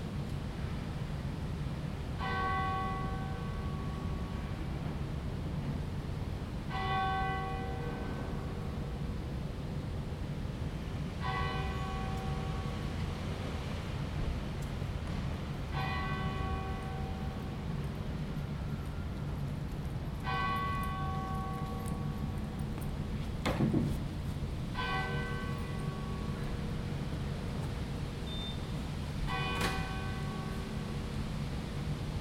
Westminster Abbey. - Dean's Yard, Westminster Abbey - Morning Prayer Bell.
Dean's Yard: an oasis of peace and calm amidst the noise of central London. The bell is calling worshipers to morning prayer in Westminster Abbey.